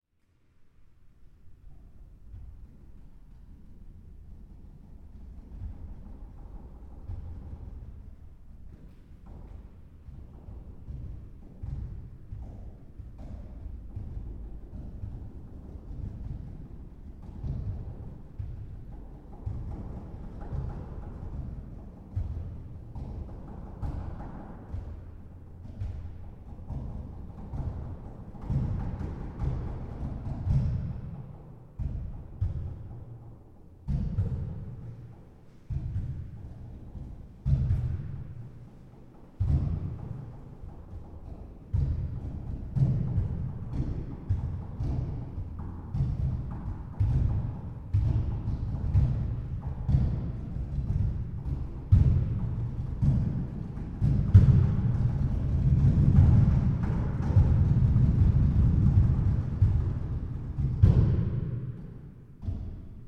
Calgary old seafood market action 01
sound action in the empty cooler room at the former seafood market of Calgary
Alberta, Canada